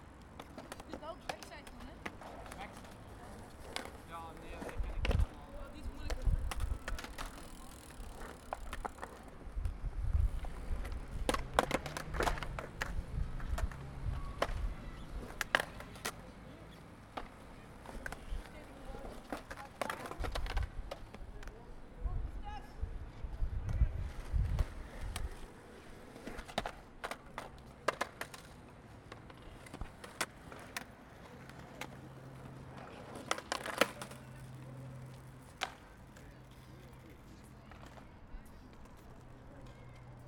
In this recording the skaters or doing some tricks on a small obstacle not using the big pool. Recorded with the X Y (stereo) of the H4 without wind protection.
België - Belgique - Belgien, European Union, 29 March, 16:31